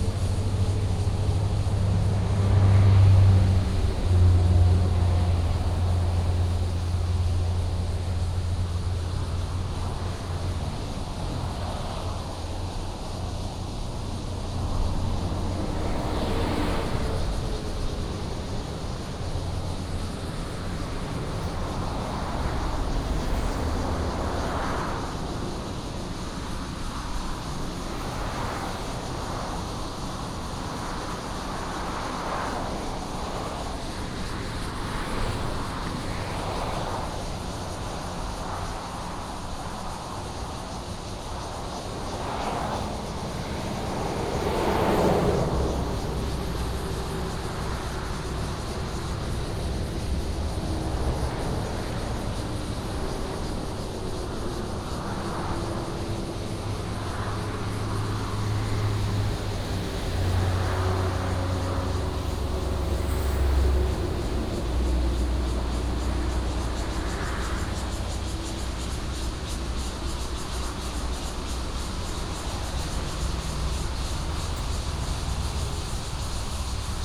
Walking in the park, Through the viaduct, Traffic sound, In the park, Cicadas
Daxi District, Taoyuan City, Taiwan, 25 July 2017, 05:30